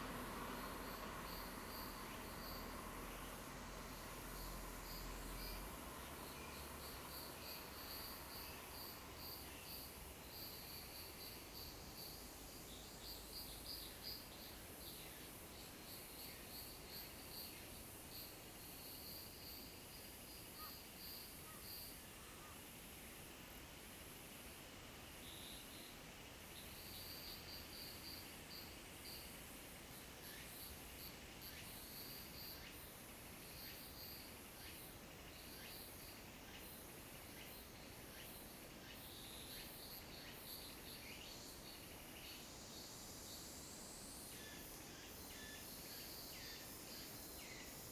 Gaoraoping, Fuxing Dist., Taoyuan City - Mountain road
Mountain road, The sound of birds, Traffic sound, Zoom H2n MS+XY
Taoyuan City, Taiwan, 14 August 2017